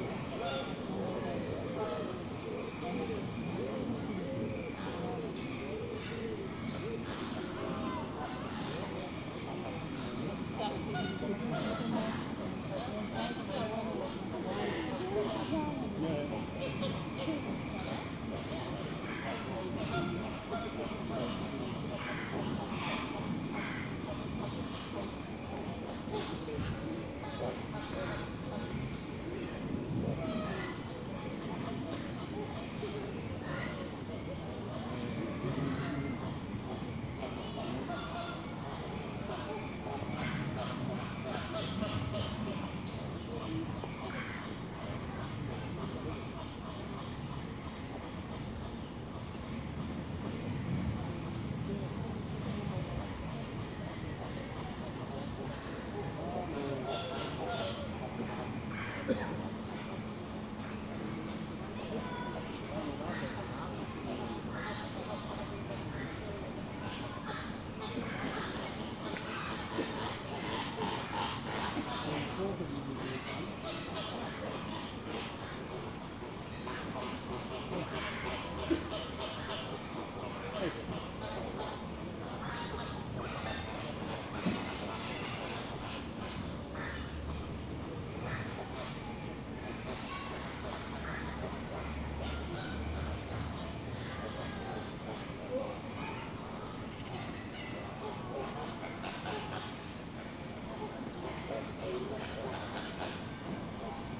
{"title": "Burgers' Zoo, Antoon van Hooffplein, Arnhem, Nederland - Zoo Atmosphere", "date": "2013-07-26 15:30:00", "description": "General atmosphere in Burgers' Zoo, Arnhem. Recorded with my Zoom's internal mics near the Flamingo pond.", "latitude": "52.01", "longitude": "5.90", "altitude": "79", "timezone": "Europe/Amsterdam"}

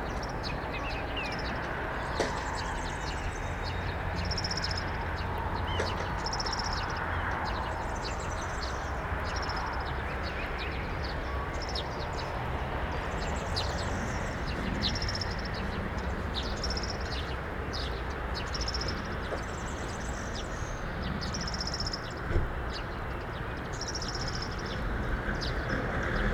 {"title": "Weimar, Deutschland - NordWestPunkt", "date": "2012-04-24 13:58:00", "description": "SeaM (Studio fuer elektroakustische Musik) klangorte - NordWestPunkt", "latitude": "51.00", "longitude": "11.30", "altitude": "289", "timezone": "Europe/Berlin"}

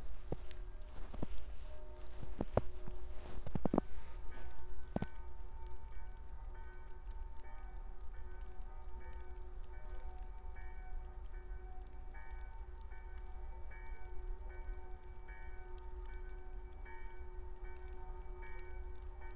{"title": "path of seasons, Piramida, Maribor - snowflakes on unfolded book On nothing", "date": "2014-01-28 16:59:00", "latitude": "46.57", "longitude": "15.65", "timezone": "Europe/Ljubljana"}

